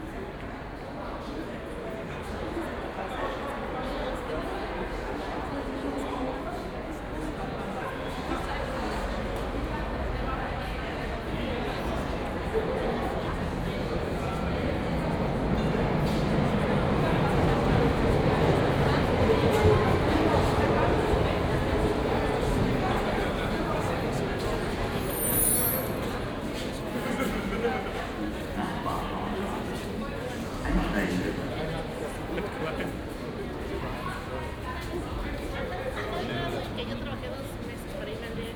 berlin, hallesches tor
weekend crowd waiting for the train at station hallesches tor, station ambience, car filled with people